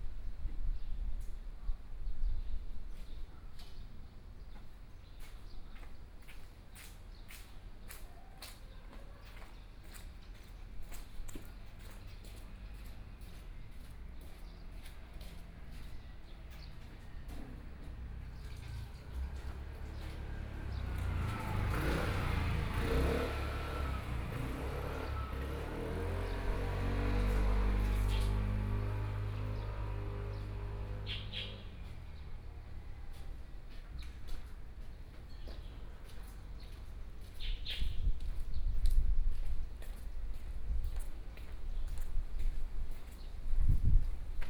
福鎮廟, 壯圍鄉新社村 - In the temple
In the temple plaza, Traffic Sound, Birdsong, Small village
Zoom H6 MS+ Rode NT4
Zhuangwei Township, Yilan County, Taiwan, 29 July 2014, 12:13